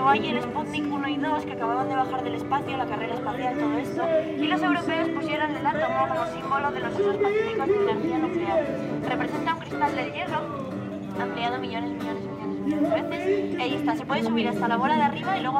On the Mont des Arts, a big pedestrian square, tourist guide in spanish.
25 August 2018, 11:30